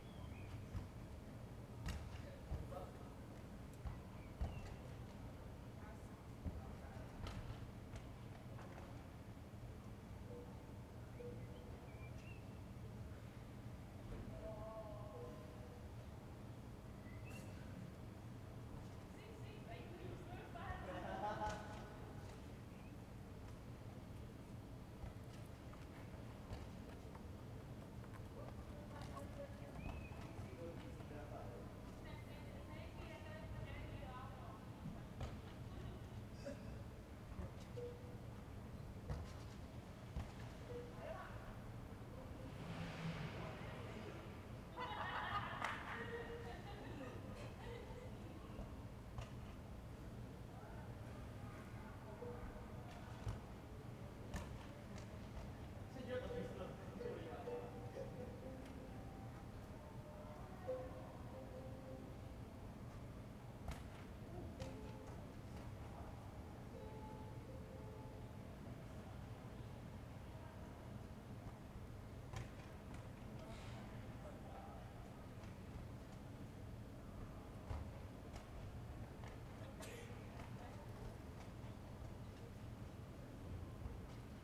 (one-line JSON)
{"date": "2020-05-17 15:29:00", "description": "\"Sunday afternoon with banjo, lol, bird and dog in the time of COVID19\" Soundscape\nChapter LXIX of Ascolto il tuo cuore, città. I listen to your heart, city\nSunday May 17th, 2020. Fixed position on an internal terrace at San Salvario district Turin, sixty-eight days after (but day fourteen of phase II) emergency disposition due to the epidemic of COVID19.\nStart at 3:29 p.m. end at 4:15 p.m. duration of recording 45’47”", "latitude": "45.06", "longitude": "7.69", "altitude": "245", "timezone": "Europe/Rome"}